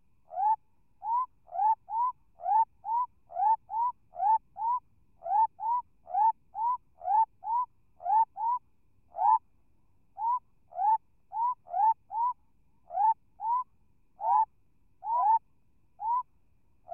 Frogs calling from burrows in a marshy area. Recorded with a Sound Devices 702 field recorder and a modified Crown - SASS setup incorporating two Sennheiser mkh 20 microphones.
Western Australia, Australia